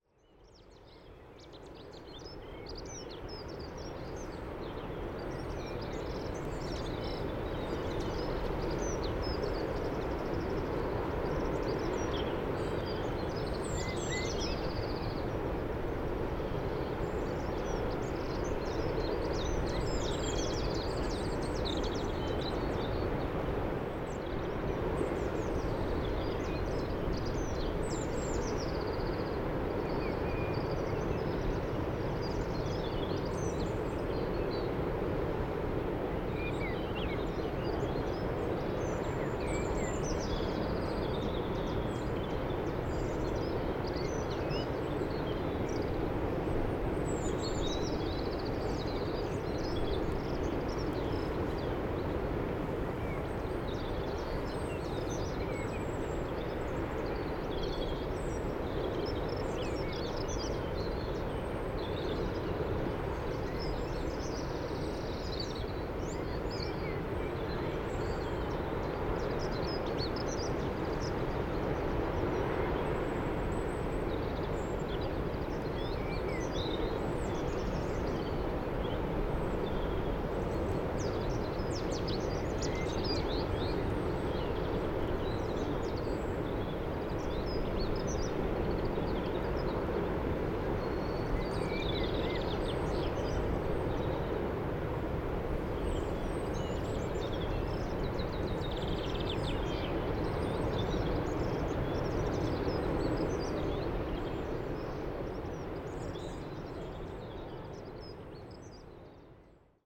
Île Renote, Trégastel, France - Birds and distant Sea [Ile Renote ]
Des oiseaux et la mer entendu depuis l'autre versant de la presqu'île.
Birds and the sea heard from the other side of the peninsula.
April 2019.